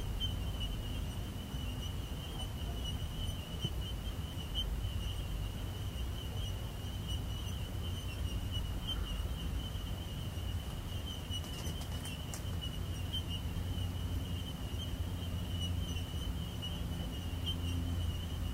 soirée chez kakouc le tisaneur au bout du monde
Reunion, 3 October 2010, 12:30